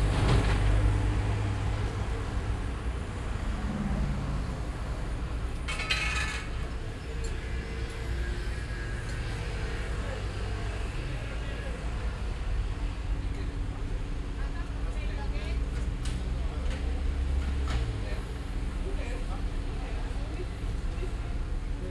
Workers and bulldozers in the street
Barcelona, Spain